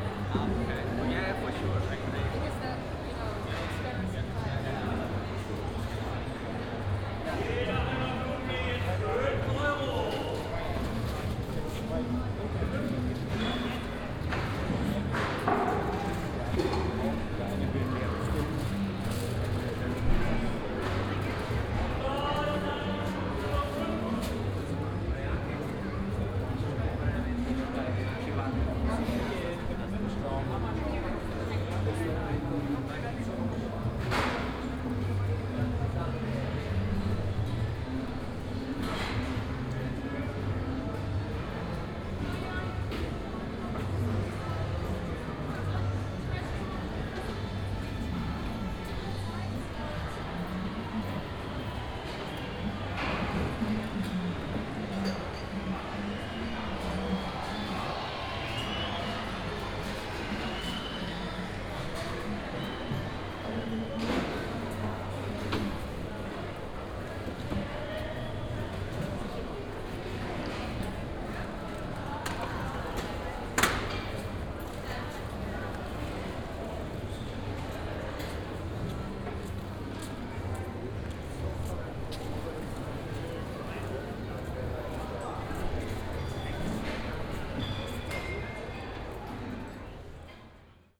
Kreuzberg, Markthalle Neun - closing hours
(binaural) most vendors packing their goods and closing the stands. the market getting slowly empty but still a bunch of people hanging around, having food, drinks, talking.
Berlin, Germany, 30 May